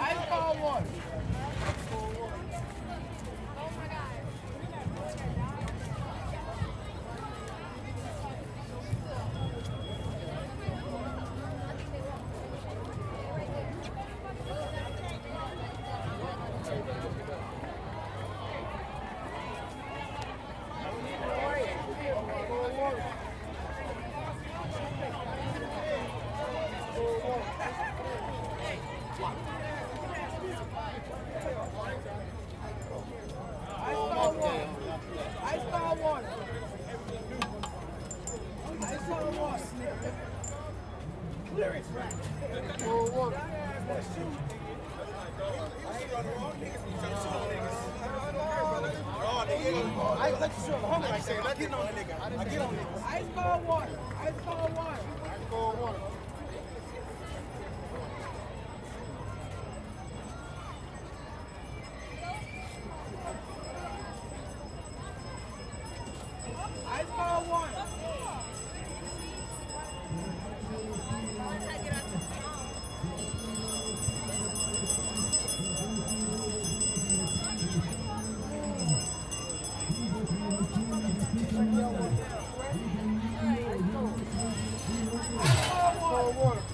Crenshaw, Los Angeles, Kalifornien, USA -, martin luther king memorial parade
LA - martin luther king memorial parade at crenshaw / martin luther king jr, spectators and water sellers
CA, USA